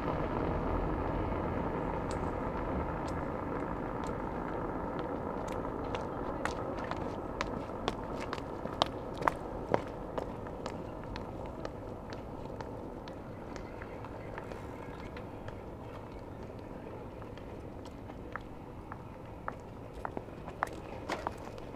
Berlin: Vermessungspunkt Maybachufer / Bürknerstraße - Klangvermessung Kreuzkölln ::: 03.11.2010 ::: 00:03